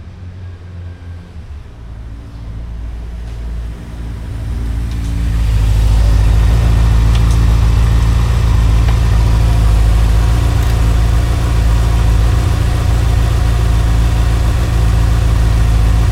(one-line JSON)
{"title": "Rijeka, Riteh.uniri, garage, DIN", "date": "2011-05-24 20:09:00", "latitude": "45.34", "longitude": "14.43", "altitude": "77", "timezone": "CET"}